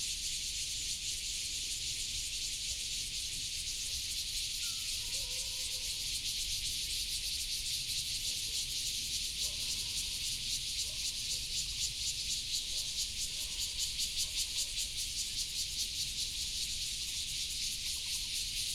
Zhaiming Temple, Daxi Dist. - Cicadas and Birds sound
Cicadas and Birds sound, In the square outside the temple